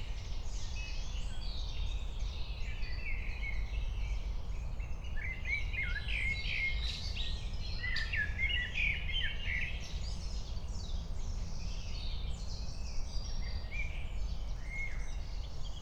21:01 Berlin, Königsheide, Teich - pond ambience